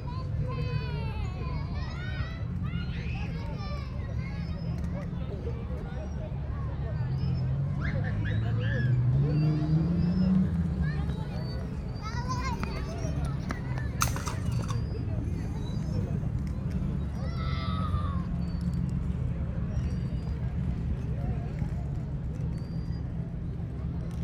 Parque Micaela Bastidas - playground on a sunday afternoon in wintertime
Children and families play in a playground on a brisk, partly cloudy Sunday afternoon in winter. On one side, kids line up to slide down a zip line, their parents running after them. On the other side, a typical playground. Recorded from a bench on the path, using a Sennheiser AMBEO VR (ambisonic) and rendered to binaural using KU100 HRTF.
Argentina